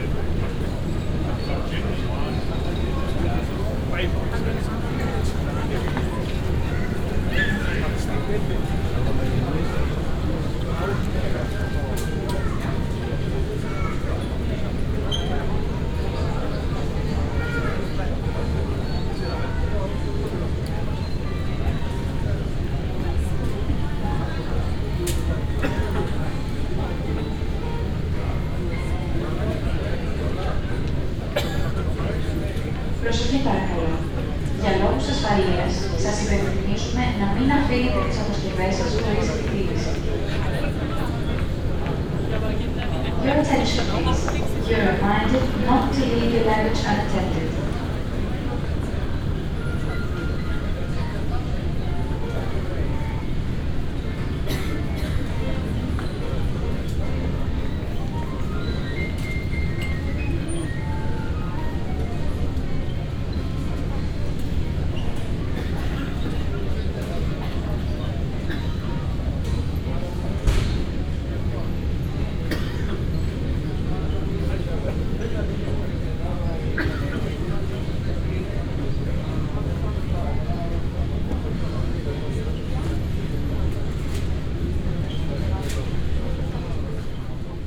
Athens International Airport - bag pick up hall
(binaural) travelers waiting for their bags and heading towards the exit at the aiport in Athens. (sony d50 +luhd PM-01)
Spata Artemida, Greece, 5 November, 14:35